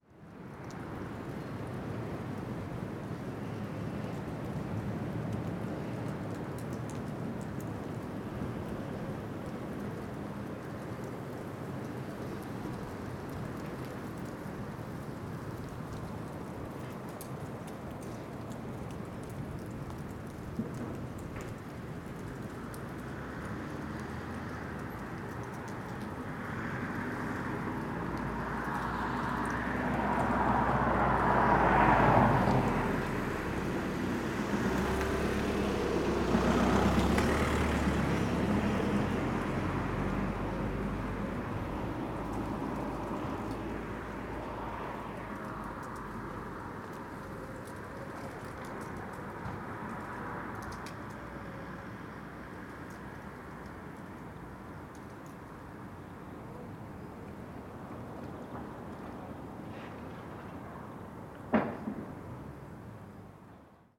The Drive Westfield Drive Parker Avenue
Over the wall
snowdrops and crocuses
push up through the cold wind
North East England, England, United Kingdom, 4 March 2021, 13:51